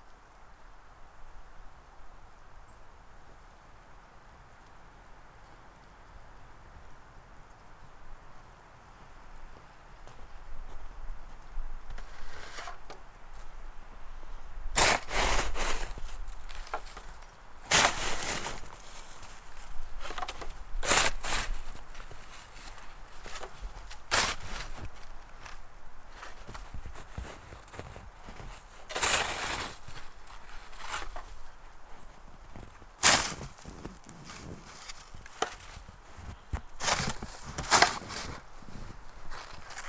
Three Pines Rd., Bear Lake, MI, USA - Late January Snowfall
Light snowfall adds to the pile already on the ground. Snowflakes and birds, followed by moving supplies between two vehicles and shovelling a path. Stereo mic (Audio-Technica, AT-822), recorded via Sony MD (MZ-NF810, pre-amp) and Tascam DR-60DmkII.